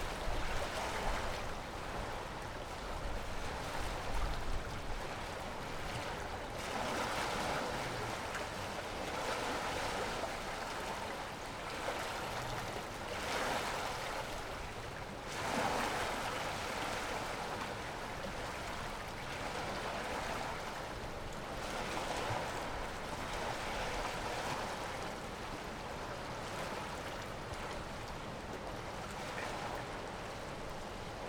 井峖海堤, Magong City - On the coast
Wave and tidal, On the coast
Zoom H6 + Rode NT4